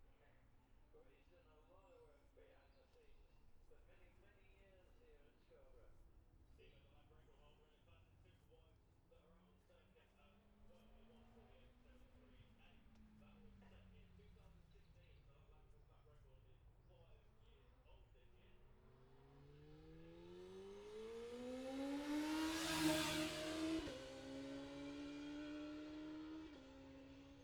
Jacksons Ln, Scarborough, UK - olivers mount road racing ... 2021 ...
bob smith spring cup ... classic superbikes practice ... dpa 4060s to Mixpre3 ...